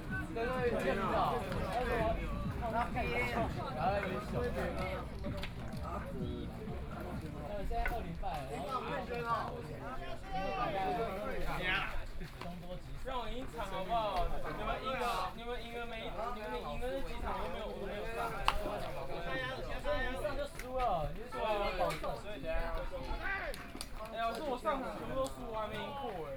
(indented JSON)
{
  "title": "羅東鎮仁愛里, Yilan County - Softball game",
  "date": "2014-07-27 13:41:00",
  "description": "Softball game, Hot weather, Traffic Sound\nSony PCM D50+ Soundman OKM II",
  "latitude": "24.69",
  "longitude": "121.75",
  "altitude": "11",
  "timezone": "Asia/Taipei"
}